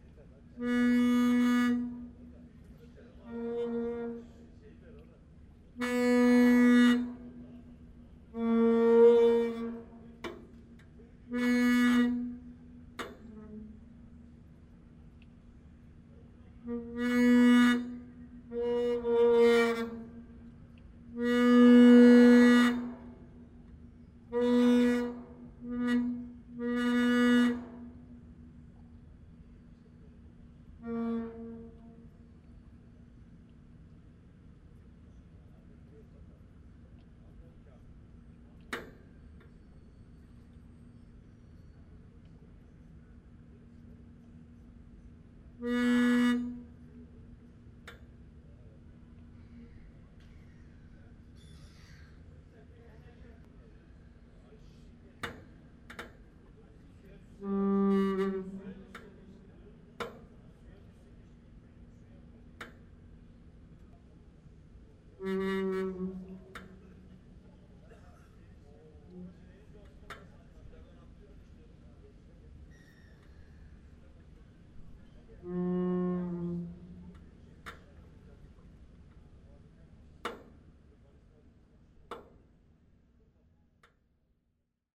{
  "title": "Antwerpen, Belgique - Pontoon gnashing",
  "date": "2018-08-04 14:00:00",
  "description": "On the 't Steen pontoon, terrible gnashing of the pillars, while rising tide on the Schelde river.",
  "latitude": "51.22",
  "longitude": "4.40",
  "altitude": "2",
  "timezone": "GMT+1"
}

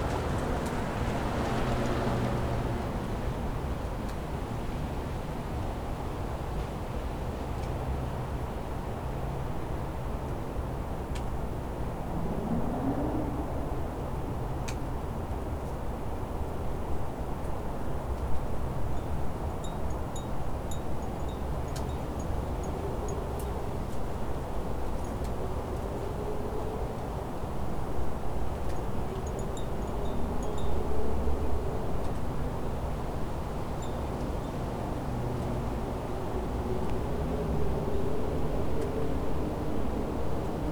{
  "title": "Suffex Green Lane, GA - Windy Fall Day",
  "date": "2019-11-27 16:11:00",
  "description": "A recording of a beautiful fall day. This was taken from a porch with a Tascam DR-22WL and a windmuff. You can hear leaves, vehicles, people talking by the side of the road, wind chimes, and a few other sounds as well.",
  "latitude": "33.85",
  "longitude": "-84.48",
  "altitude": "296",
  "timezone": "America/New_York"
}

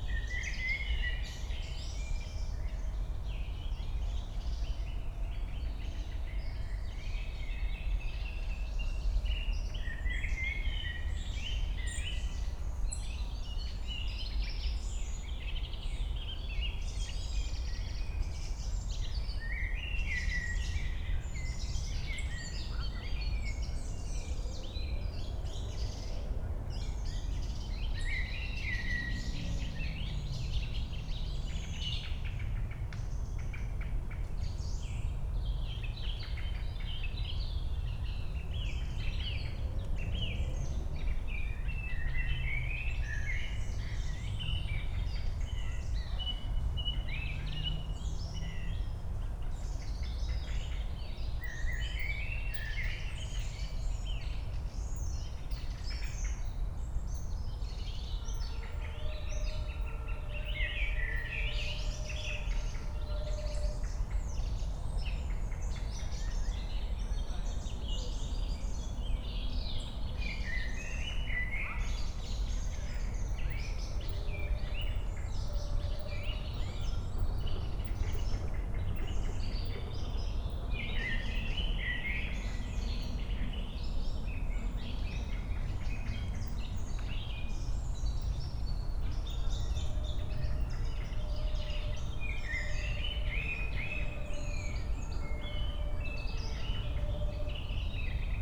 {
  "title": "Königsheide, Berlin - evening ambience at the pond",
  "date": "2020-06-25 21:45:00",
  "description": "Song thrush, voices, city drone, a frog, warm evening in early summer\n(Sony PCM D50, Primo EM172)",
  "latitude": "52.45",
  "longitude": "13.49",
  "altitude": "35",
  "timezone": "Europe/Berlin"
}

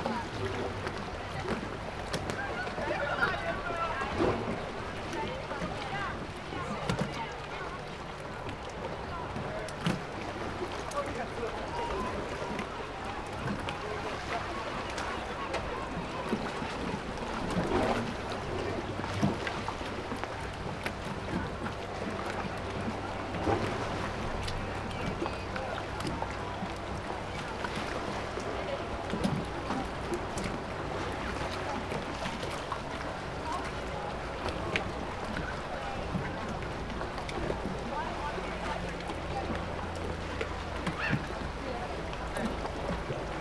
{
  "title": "Manarola, marina - row of boats",
  "date": "2014-09-05 14:44:00",
  "description": "row of boats rising and falling on waves hitting the rebounding form the pier. lots of people around, running, swimming, sunbathing on the rocks.",
  "latitude": "44.11",
  "longitude": "9.73",
  "altitude": "7",
  "timezone": "Europe/Rome"
}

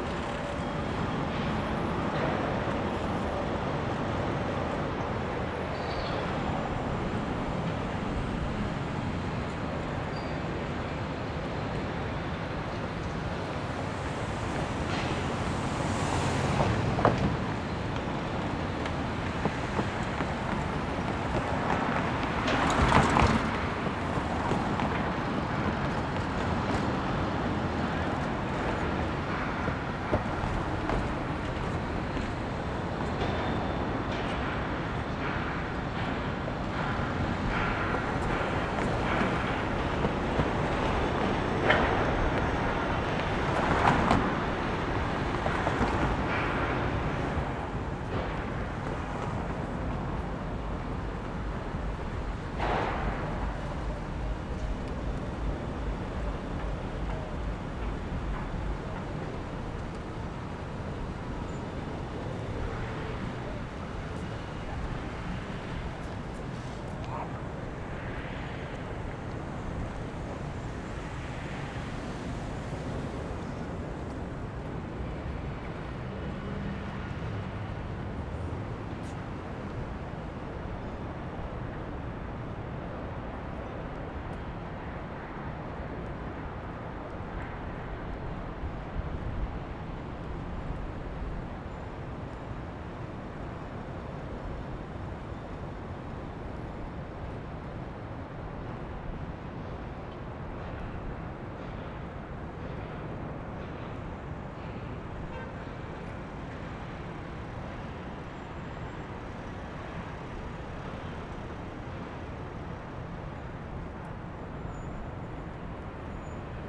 {
  "title": "Lexington Ave, New York, NY, USA - Lex Ave Walk",
  "date": "2022-02-11 11:20:00",
  "description": "A short walk around Lexington Ave., starting at the Chrysler Building and moving up towards E47st street and then Park Ave.\nGeneral sounds of traffic, pedestrians, constructions, and footsteps.",
  "latitude": "40.75",
  "longitude": "-73.97",
  "altitude": "16",
  "timezone": "America/New_York"
}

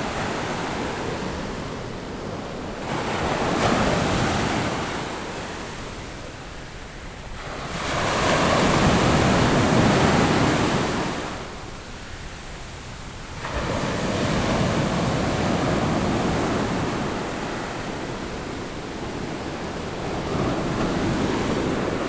{
  "title": "Hualien County, Taiwan - the waves",
  "date": "2014-08-27 14:23:00",
  "description": "Sound of the waves, The weather is very hot\nZoom H6 MS+Rode NT4",
  "latitude": "24.11",
  "longitude": "121.64",
  "altitude": "1",
  "timezone": "Asia/Taipei"
}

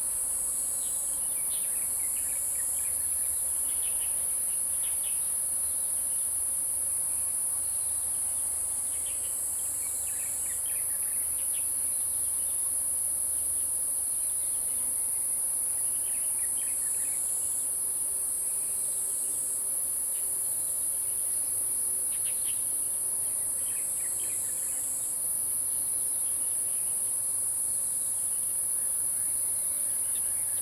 {
  "title": "埔里鎮桃米里, Nantou County, Taiwan - Beneath fruit trees",
  "date": "2015-08-12 06:23:00",
  "description": "Birds singing, Insect sounds, Bee's voice\nZoom H2n MS+XY",
  "latitude": "23.94",
  "longitude": "120.93",
  "altitude": "459",
  "timezone": "Asia/Taipei"
}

